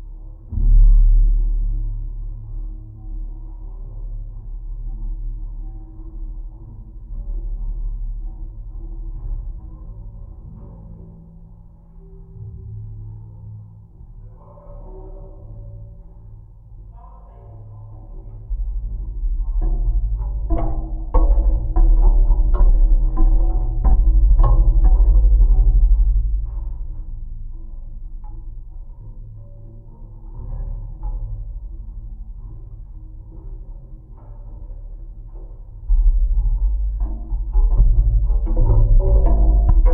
Ventspils, Latvia, artillery correction tower
The stairs in arlillery correction tower. Recorded with geophone.